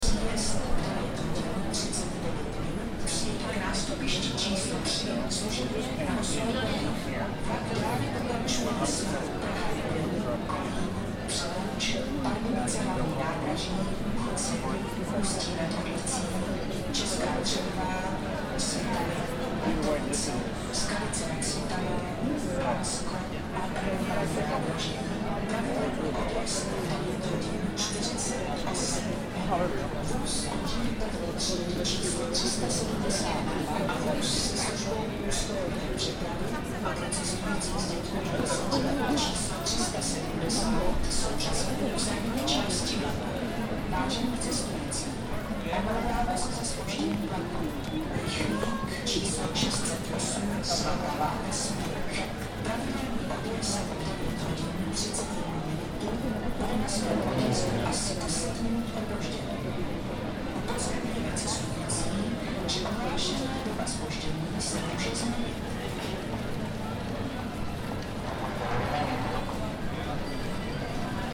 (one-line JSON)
{"date": "2011-07-11 21:11:00", "description": "Hlavni nadrazi, Prague, interior noises", "latitude": "50.08", "longitude": "14.43", "altitude": "210", "timezone": "Europe/Prague"}